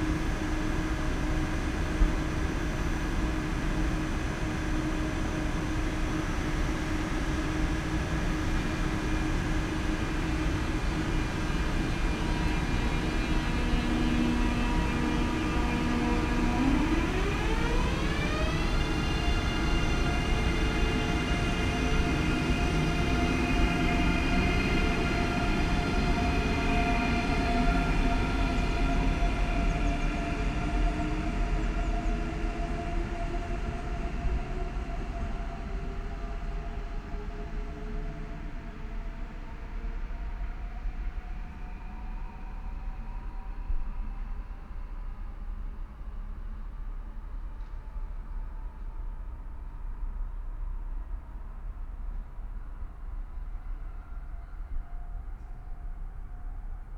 station ambience, ICE high speed train arrives and stops with heavily squeaking brakes
(Sony PCM D50, DPA4060)
Limburg, Germany, 29 October 2014, 12:15